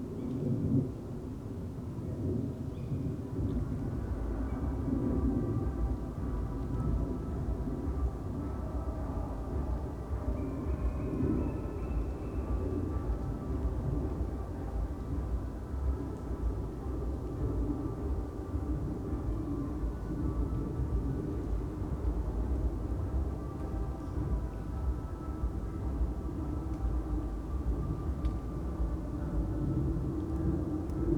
forest near village Niedertiefenbach, midnight ambience with sounds of an Oktoberfest party, the unavoidable aircraft crossing and one ore more Tawny owls calling, Strix aluco. But not completely sure here...
(Sony PCM D50, Primo EM172)
3 November 2019, ~00:00